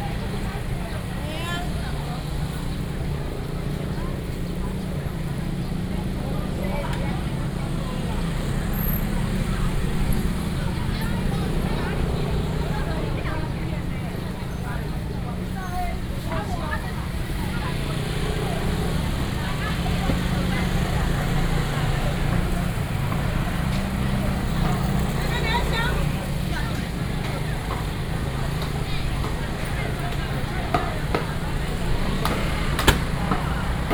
Traditional markets, Very noisy market, Street vendors selling voice, A lot of motorcycle sounds